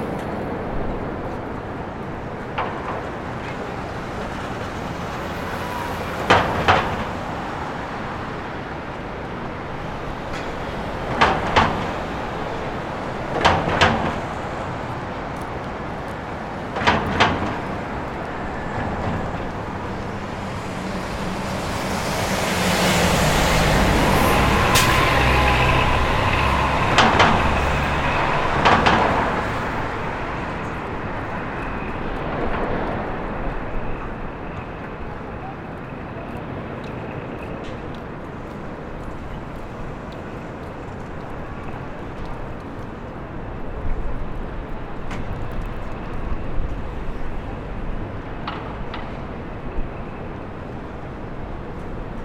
{"title": "Lexington Ave/E 46 St, New York, NY, USA - A dog barking at traffic in Lexington Ave.", "date": "2022-03-25 15:00:00", "description": "Sound of a dog barking at traffic in Lexington Ave.\nSound of different vehicles (cars, buses, motorcycles, bikes, etc).", "latitude": "40.75", "longitude": "-73.97", "altitude": "19", "timezone": "America/New_York"}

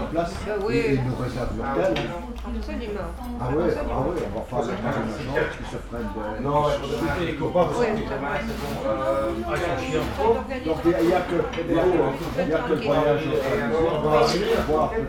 {"title": "Brussels, Square Prévost Delaunay, in a bar.", "date": "2012-02-03 14:45:00", "description": "Inner field recordings when its too cold outside :)\nPCM-M10, internal microphones.", "latitude": "50.86", "longitude": "4.39", "timezone": "Europe/Berlin"}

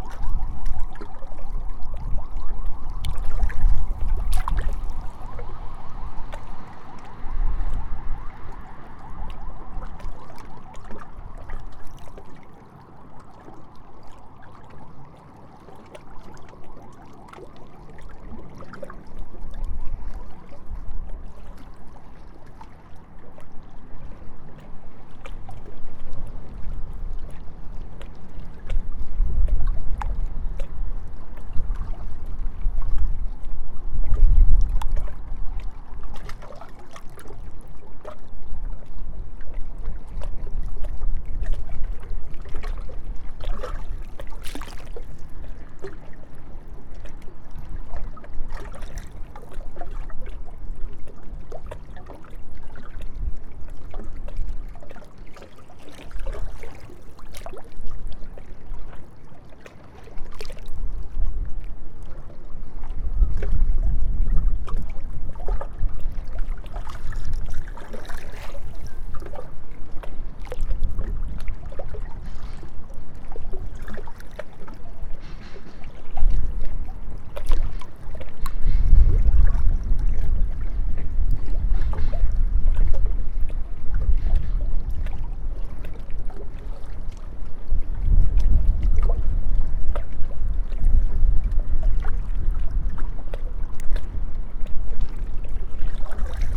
{"title": "Vistula perspective, Kraków, Poland - (744) Water Atmosphere", "date": "2021-04-04 12:20:00", "description": "Recording of an atmosphere on a windy day from the perspective as close to the river as possible (excluding hydrophones options...). Easter Monday afternoon.\nRecorded with Tascam DR100 MK3.", "latitude": "50.05", "longitude": "19.95", "altitude": "204", "timezone": "Europe/Warsaw"}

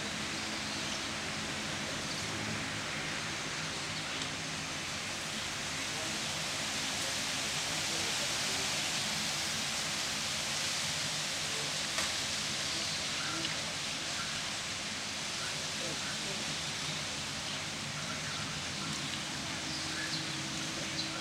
{"title": "Japan, Shiga, Otsu, Sakamoto, 梅林こども遊園地 - 202006221826 Bairin Childrens Playground", "date": "2020-06-22 18:26:00", "description": "Title: 202006221826 Bairin Children's Playground\nDate: 202006221826\nRecorder: Sound Devices MixPre-6 mk1\nMicrophone: Davinci Head mk2\nTechnique: Binaural Stereo\nLocation: Sakamoto, Shiga, Japan\nGPS: 35.075577, 135.870929\nContent: wind, park, summer, 2020, japan, cars, birds, binaural, HRTF, sakamoto, otsu, shiga, bairin, playground", "latitude": "35.08", "longitude": "135.87", "altitude": "129", "timezone": "Asia/Tokyo"}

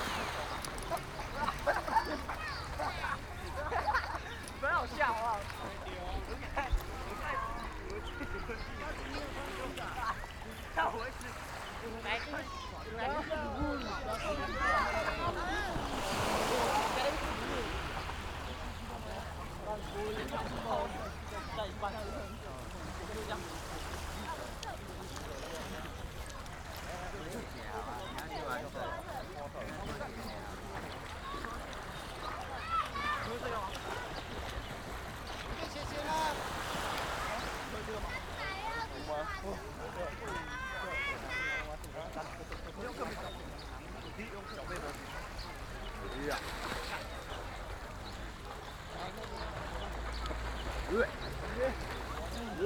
{"title": "豆腐岬, 蘇澳鎮南建里 - At the beach", "date": "2014-07-28 16:36:00", "description": "Sound of the waves, At the beach, Tourist\nZoom H6 MS+ Rode NT4", "latitude": "24.58", "longitude": "121.87", "altitude": "6", "timezone": "Asia/Taipei"}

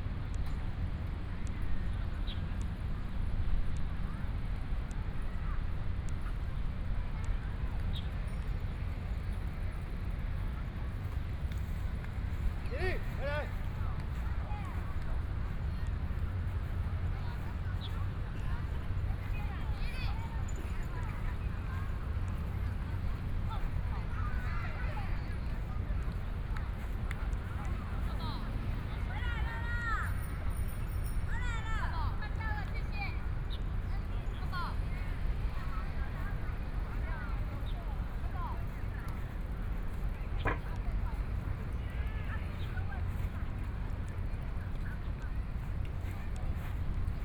{"title": "高雄市文化中心, Taiwan - Walking through the square", "date": "2014-05-15 17:43:00", "description": "Walking through the square", "latitude": "22.63", "longitude": "120.32", "altitude": "5", "timezone": "Asia/Taipei"}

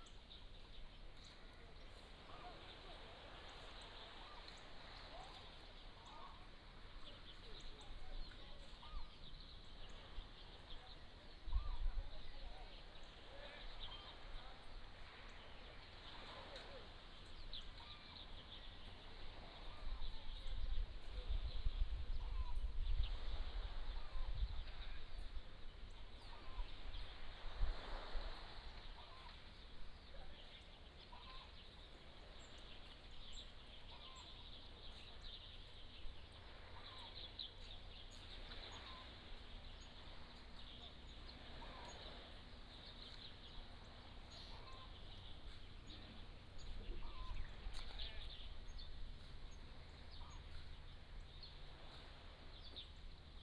{"title": "馬祖村, Nangan Township - Small village", "date": "2014-10-15 08:48:00", "description": "Birds singing, Chicken sounds, Sound of the waves, Goose calls, Traffic Sound, Small village", "latitude": "26.16", "longitude": "119.92", "altitude": "37", "timezone": "Asia/Taipei"}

